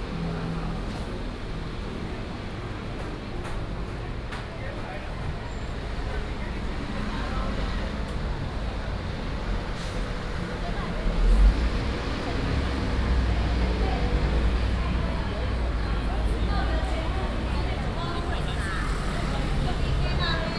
Chenggong 1st Rd., 基隆市仁愛區 - Traditional Market
Traffic Sound, Walking through the market, Walking on the road, Railway crossings, Traveling by train